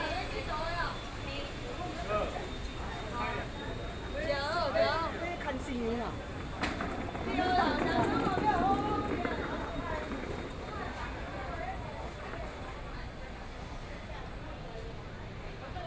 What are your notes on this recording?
walking in the market, Traffic Sound, Walking south direction, Binaural recordings